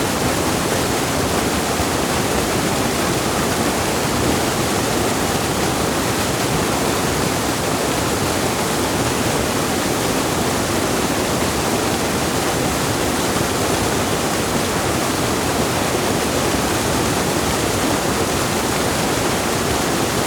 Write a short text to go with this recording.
This area near the Best factory is a strange, surreal, empty landscape, covered in industrial coal waste, plants are beginning to re-establish. It is quiet and rather peaceful. Water black with coal dust gushes from rusty pipes. The sediment settles and the water, somewhat cleaner, flows into the larger pool. It seems relatively uncontaminated as many geese, duck and coots live here. Falcons fly around.